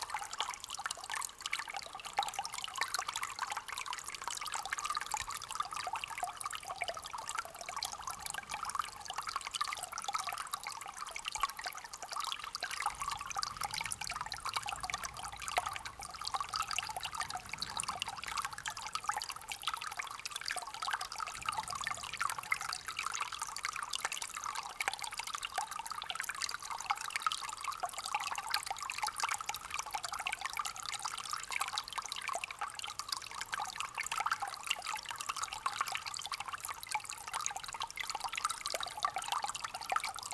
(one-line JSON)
{"title": "Differdange, Luxembourg - Waterstream", "date": "2016-03-28 08:55:00", "description": "A small waterstream in an underground mine tunnel.", "latitude": "49.51", "longitude": "5.86", "altitude": "386", "timezone": "Europe/Luxembourg"}